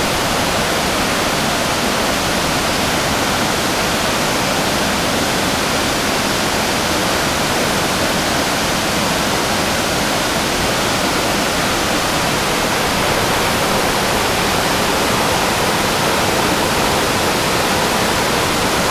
十分瀑布, New Taipei City - Waterfall
New Taipei City, Pingxi District, 13 November 2012